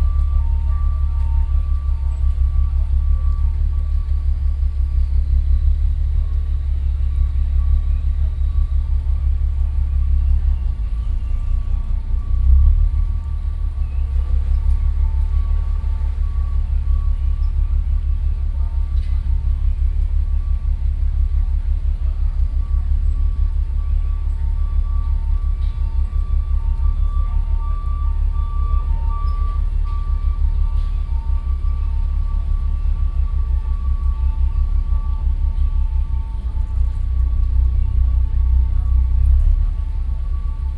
Keelung, Taiwan - Bisha Fishing Harbor
Fishing boats, Traveling through, Sony PCM D50 + Soundman OKM II
基隆市 (Keelung City), 中華民國, June 2012